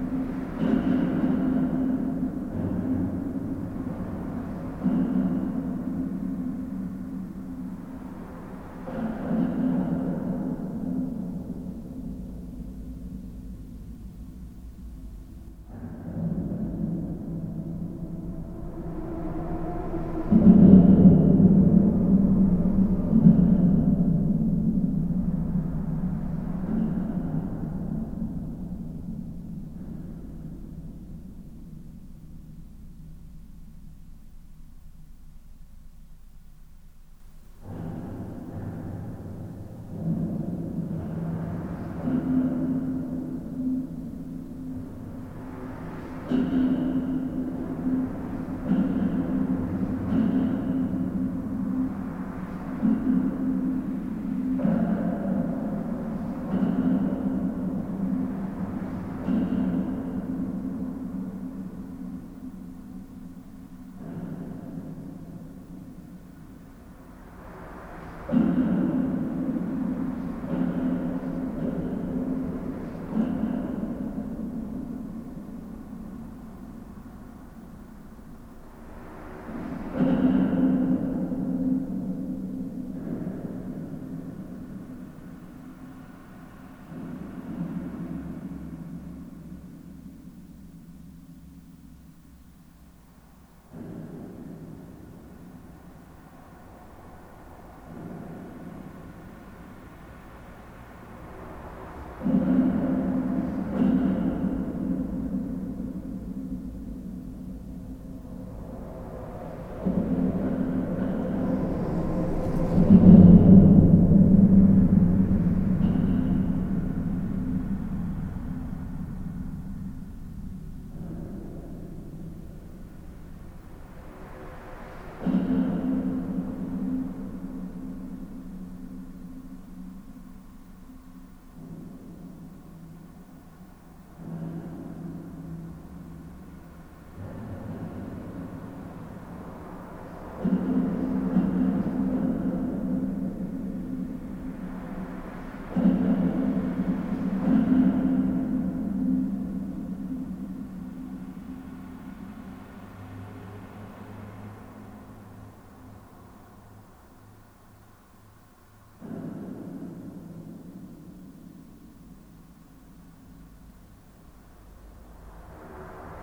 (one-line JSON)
{"title": "Genappe, Belgique - Inside the bridge", "date": "2016-04-15 13:10:00", "description": "Inside an higway bridge. Recording the expansion joint, with the intense reverberation of the trucks crossing.", "latitude": "50.62", "longitude": "4.53", "altitude": "83", "timezone": "Europe/Brussels"}